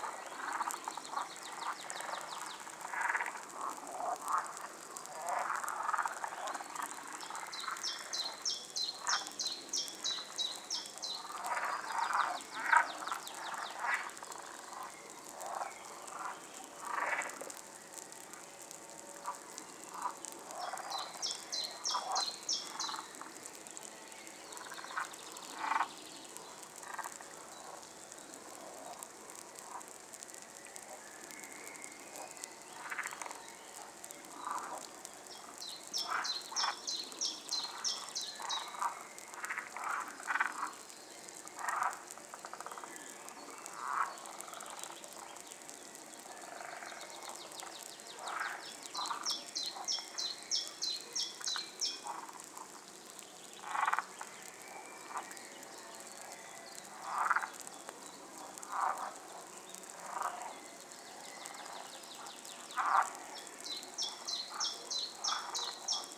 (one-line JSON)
{"title": "Stabulankiai, Lithuania, frog bubbles", "date": "2020-05-04 17:20:00", "description": "omni microphones just over the water...frogs eggs bubbling and tadpoles churning in the water", "latitude": "55.52", "longitude": "25.45", "altitude": "168", "timezone": "Europe/Vilnius"}